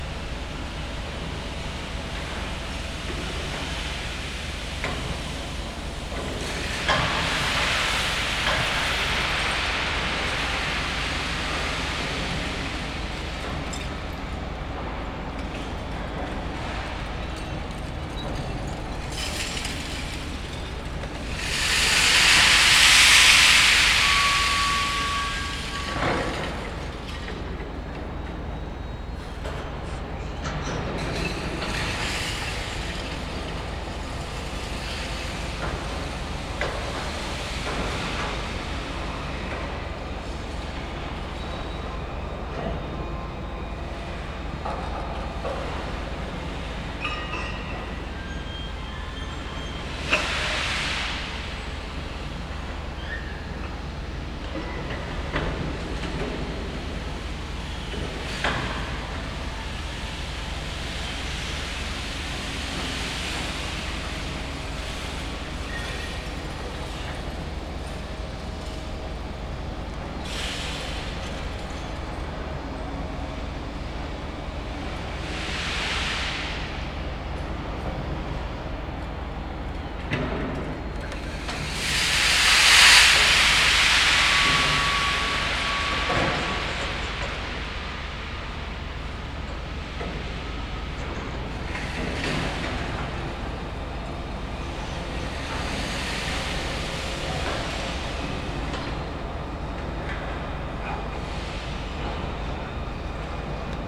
Charleroi, België - Scrap Metal Grapple
Crane grabbing scrap metal off of boat, into the factory hall